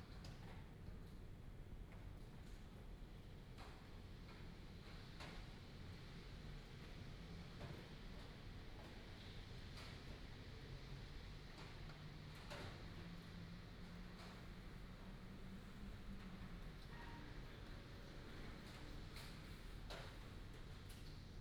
大仁街, Tamsui District - Early morning
Early morning, Raindrops sound
New Taipei City, Taiwan